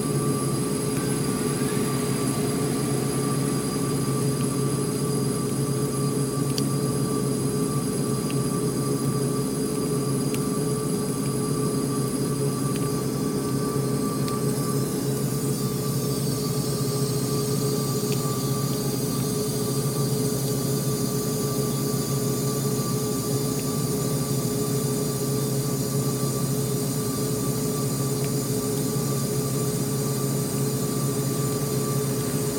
recording taken outside behind the Center for the Arts, by an air vent and water main on a wet and rainy day
Allentown, PA, USA, 9 December 2014, ~14:00